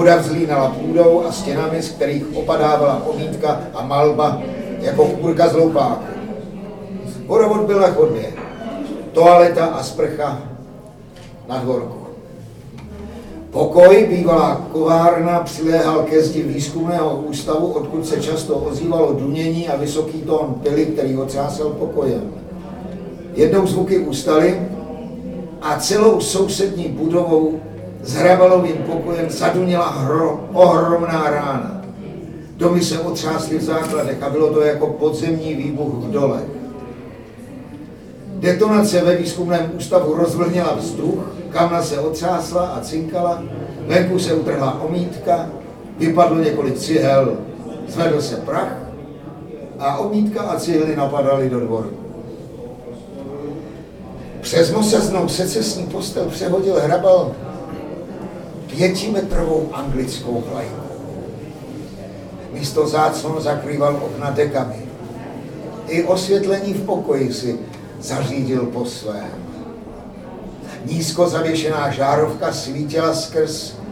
Ladislav Mrkvička čte ve Výčepu vína U Hrabala úryvek z knihy V rajské zahradě trpkých plodů o Hrabalovi a jeho životě v Libni od Moniky Zgustové . Noc literatury změnila na jeden večer pusté ulice kolem Palmovky v živou čvrť plnou lidí kvačících z jednoho místa čtení na druhé.